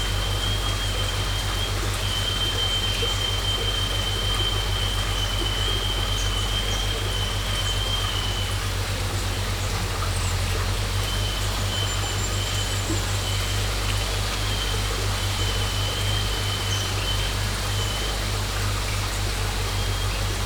SBG, Font de la Teula - tractor arando
Ambiente en el sendero, a su paso por la fuente de la Teula. Insectos, algunas aves y un sonido peculiar, los chirridos de un tractor que se encuentra arando en un campo cercano.
St Bartomeu del Grau, Spain